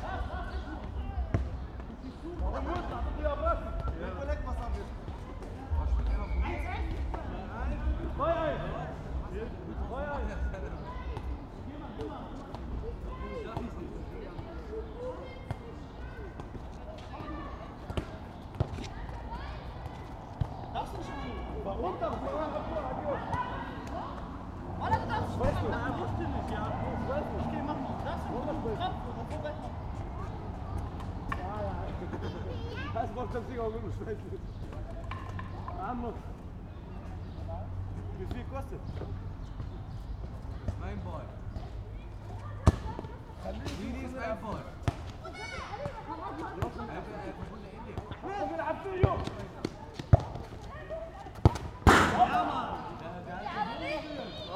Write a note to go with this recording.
wedding walks, sparrstr., football area